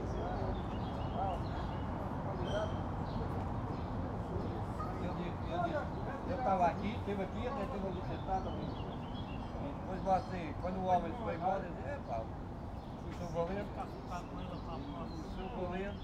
lisbon, campo dos martires - park cafe
park cafe ambience